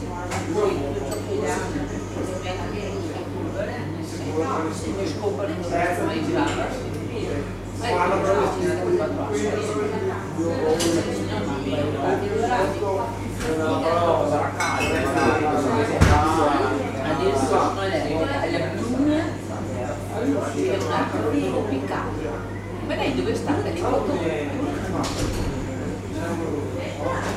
schio (vi) - gelateria caffe derby
gelateria caffè derby
22 October 2009, ~22:00